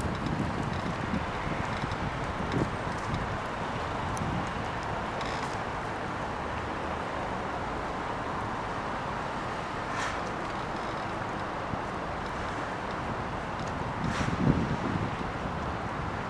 You can hear cars driving on wet asphalt, it's snowing. Warm winter. Day.
Москва, Центральный федеральный округ, Россия, 4 February